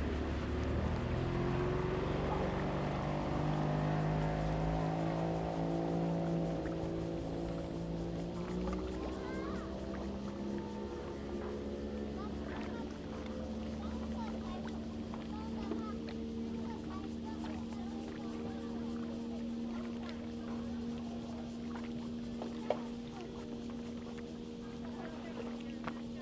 Carp Lake, Shoufeng Township - In the lake side
In the lake side, Yacht, Tourists, Hot weather
Zoom H2n MS+XY